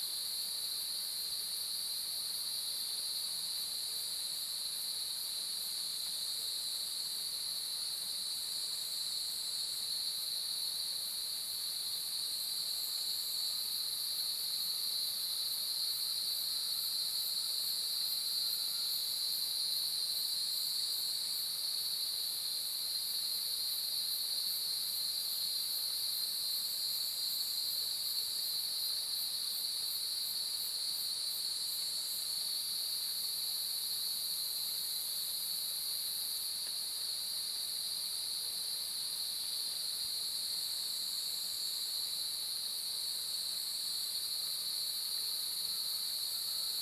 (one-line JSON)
{"title": "魚池鄉五城村, Taiwan - At the edge of the woods", "date": "2016-07-14 05:56:00", "description": "early morning, Cicadas sound, At the edge of the woods\nZoom H2n Spatial audio", "latitude": "23.92", "longitude": "120.88", "altitude": "726", "timezone": "Asia/Taipei"}